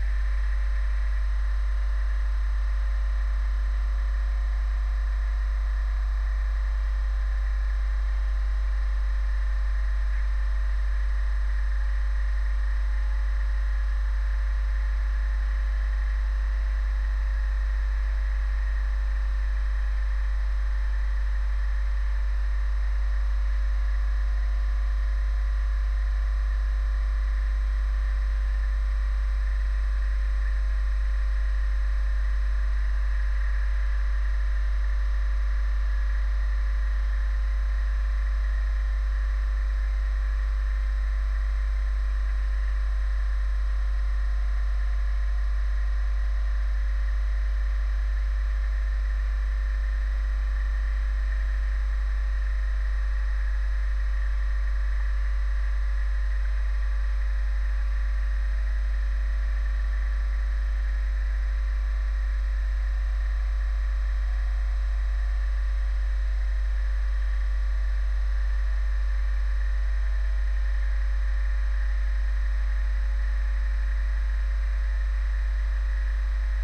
Annoying sound from underwater... I dropped the hydrophone in the river Ems, right in front of a facility that takes water from the river and transports it to the nearby nuclear power station for its cooling system. I wonder what the constant sound does to the fish in the river. At the end of the recording, there are strange distortion sounds. Don't know what it could be.
Niedersachsen, Deutschland